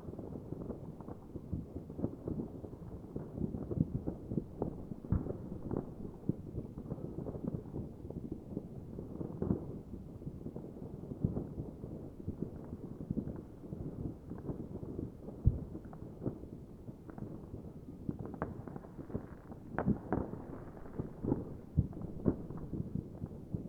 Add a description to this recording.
on a hill overlooking the lower oder valley, new year's eve fireworks from around the valley, church bells, the city, the country & me: january 1, 2014